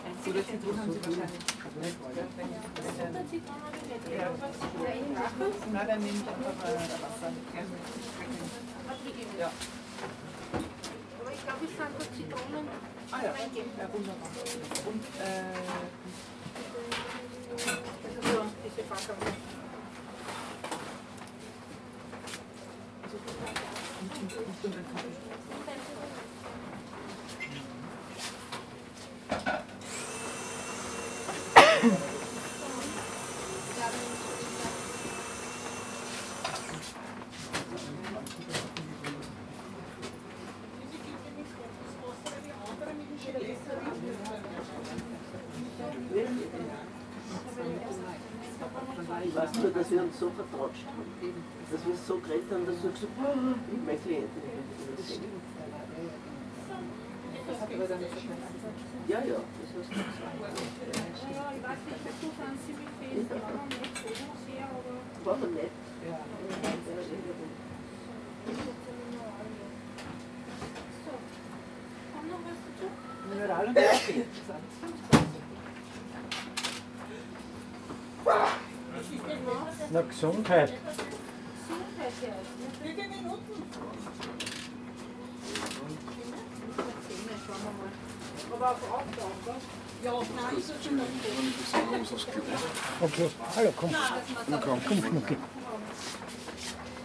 Siller-Straße, Strasshof an der Nordbahn, Österreich - Railway Buffet
Eisenbahnmuseum Strasshof : Buffet in historical railway wagon
1 May 2022, 15:05